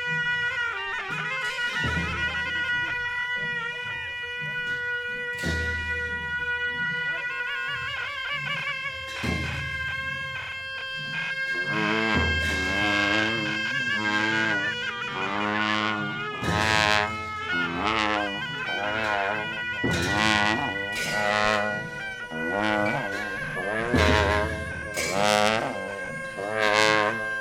8J6V5HMH+8C - Leh - Ladak - Inde
Leh - Ladak - Inde
Procession sur les hauteurs de la ville
Fostex FR2 + AudioTechnica AT825
Ladakh, India, 20 May, ~10:00